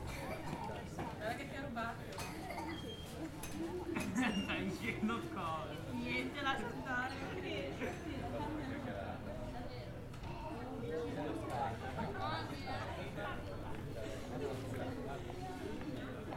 Camogli, Genua, Italien - Leben auf dem Kirchplatz von S. Rocco
Menschen im Gespräch auf dem Kirchplatz von San Rocco. Orgelklänge aus der Kirche spielen die Messe ein.
30 March, 17:28